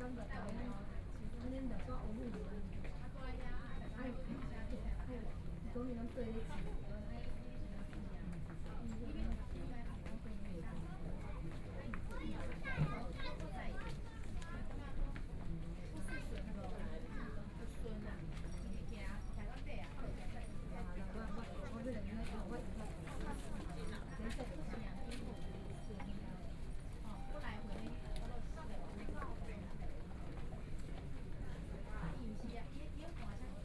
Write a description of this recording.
In the hospital, Collar counter drugs, Binaural recordings, Zoom H4n+ Soundman OKM II